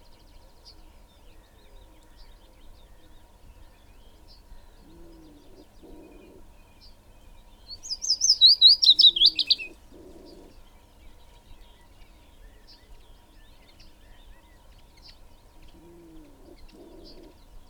Green Ln, Malton, UK - willow warbler ... wood pigeon ...
Willow warbler ... wood pigeon ... dpa 4060s to Zoom F6 ... lavaliers clipped to twigs ... bird calls ... song ... from ... yellowhammer ... pheasant ... wren ... skylark .. goldfinch ... magpie ... crow ...